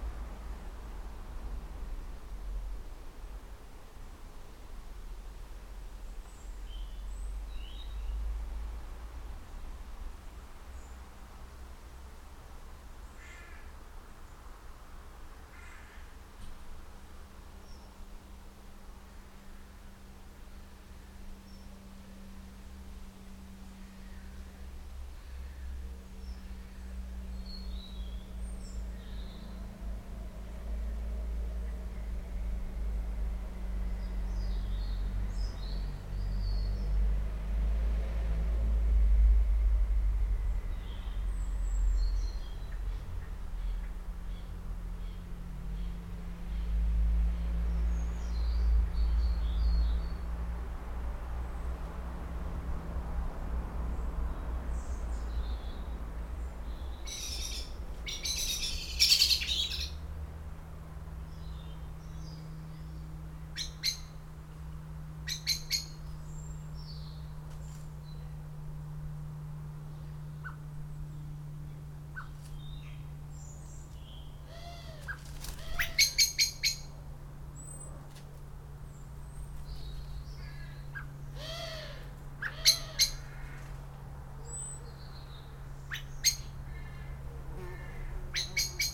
Abergavenny, UK - Garden Birds first thing in the morning
Recorded with LOM Mikro USI's, and a Sony PCM-A10.